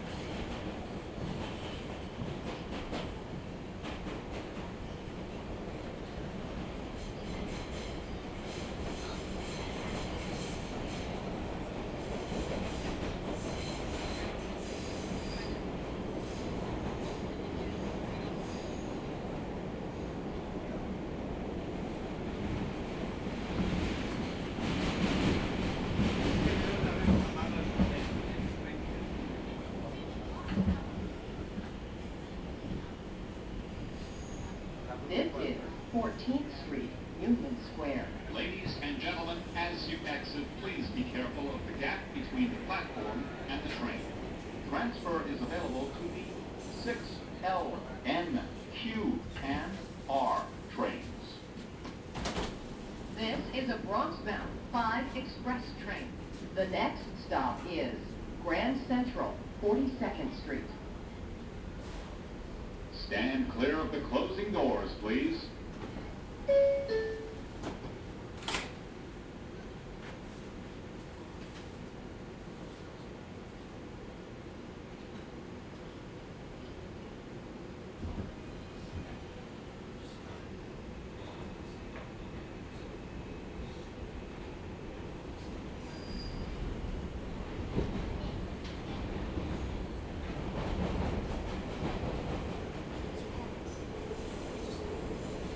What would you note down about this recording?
NYC, metro train trip from grand central station to wall street; passengers, announcements, doors;